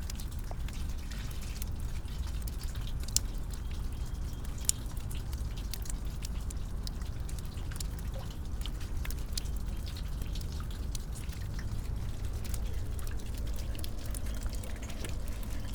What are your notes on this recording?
spring waters, drops, trickling